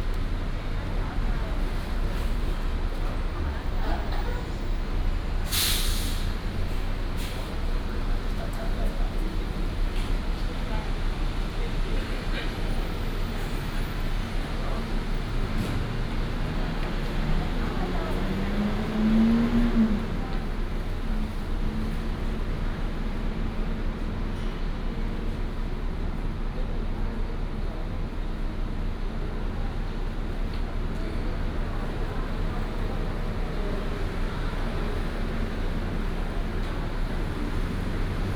{"title": "豐原客運東勢站, Dongshi Dist., Taichung City - At the bus station", "date": "2017-09-19 07:21:00", "description": "At the bus station, In the station hall, traffic sound, Binaural recordings, Sony PCM D100+ Soundman OKM II", "latitude": "24.26", "longitude": "120.83", "altitude": "368", "timezone": "Asia/Taipei"}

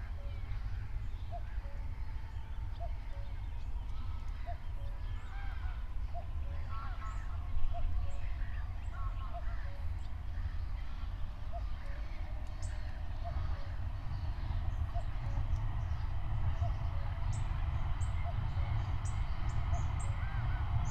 {"date": "2021-06-26 20:51:00", "description": "20:51 Berlin, Buch, Moorlinse - pond, wetland ambience", "latitude": "52.63", "longitude": "13.49", "altitude": "51", "timezone": "Europe/Berlin"}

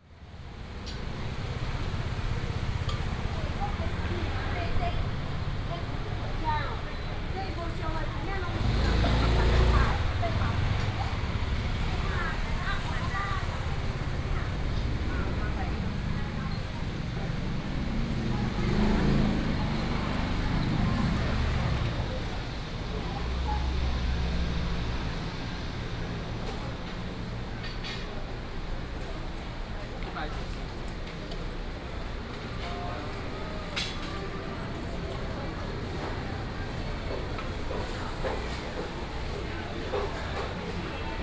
{"title": "南屯市場, Nantun Dist., Taichung City - Traditional Markets", "date": "2017-09-24 10:51:00", "description": "walking in the Traditional Markets, traffic sound, vendors peddling, Binaural recordings, Sony PCM D100+ Soundman OKM II", "latitude": "24.14", "longitude": "120.64", "altitude": "65", "timezone": "Asia/Taipei"}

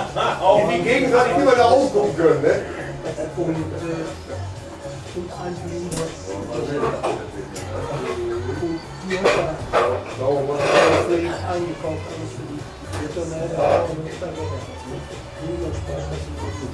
{"title": "Essen-Karnap, Deutschland - alt carnap", "date": "2010-05-15 18:36:00", "description": "alt carnap, karnaper str. 112, 45329 essen", "latitude": "51.52", "longitude": "7.01", "altitude": "32", "timezone": "Europe/Berlin"}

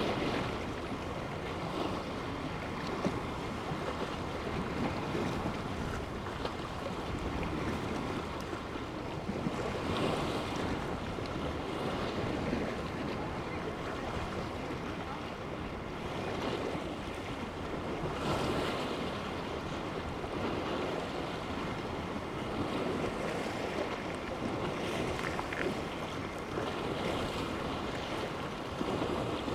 {"title": "Ventė Cape, Lithuania, on a pier", "date": "2022-07-19 14:20:00", "description": "On a pier of Ventė Cape. Waves and passengers...", "latitude": "55.34", "longitude": "21.19", "timezone": "Europe/Vilnius"}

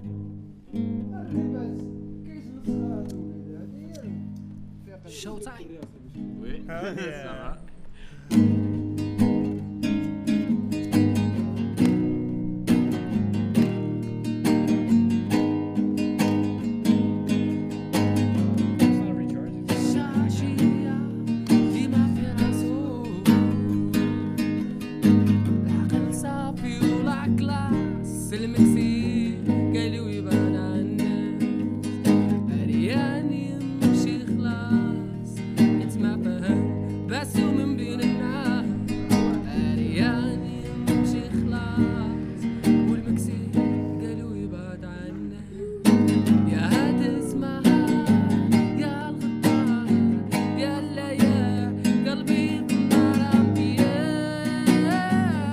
{"description": "Taza, bank al maghribe. Fall 2010\nSong with some friends I met.", "latitude": "34.22", "longitude": "-4.01", "altitude": "504", "timezone": "Europe/Berlin"}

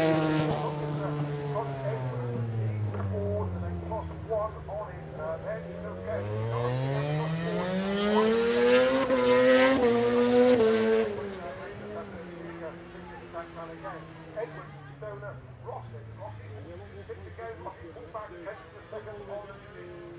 {"title": "Derby, UK - british motorcycle grand prix 2007 ... motogp race ...", "date": "2007-06-24 13:00:00", "description": "british motorcycle grand prix 2007 ... motogp race ... one point stereo mic to minidisk ...", "latitude": "52.83", "longitude": "-1.38", "altitude": "96", "timezone": "Europe/London"}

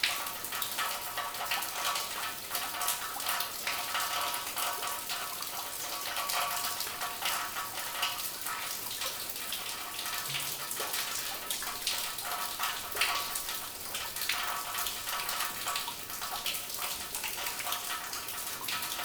{"title": "Bonneuil-en-Valois, France - Underground quarry", "date": "2018-07-29 15:45:00", "description": "Into a big underground quarry, water falling from a pit on various objects like bottles. These bottles are covered with a thick layer of limestone.", "latitude": "49.28", "longitude": "3.01", "altitude": "134", "timezone": "Europe/Paris"}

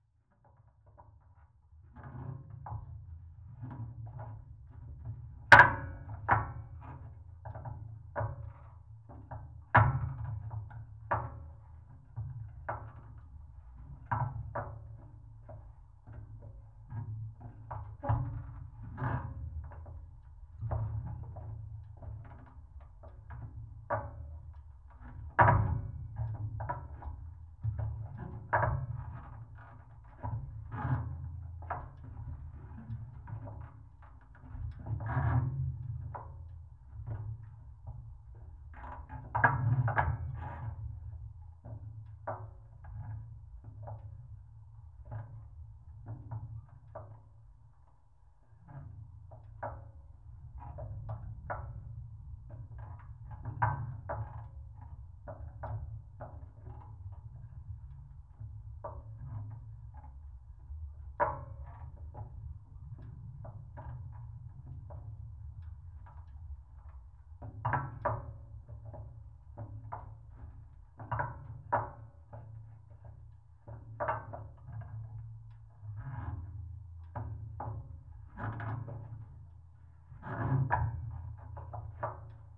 {"title": "Kaliningrad, Russia, contact on staircase", "date": "2019-06-08 20:30:00", "description": "contact microphone on staircase/trap", "latitude": "54.71", "longitude": "20.50", "altitude": "1", "timezone": "Europe/Kaliningrad"}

